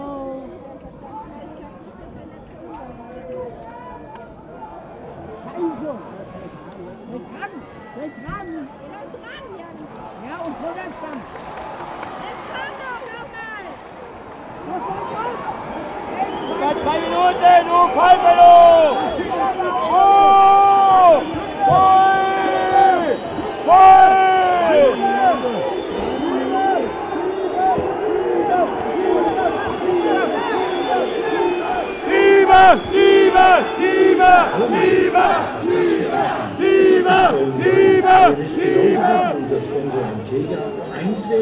the last minutes of the match, hamburger sv scores a goal an wins 1-0 over mainz 05, the fans of mainz 05 call the scorer an asshole and the referee a cheat
the city, the country & me: october 16, 2010
Mainz, Deutschland, 16 October 2010